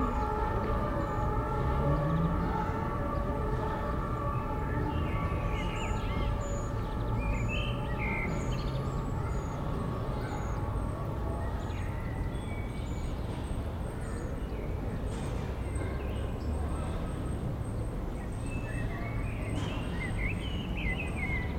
Paysage sonore du parc du Verney à Chambéry, au printemps.
France métropolitaine, France, 8 April 2019, 18:20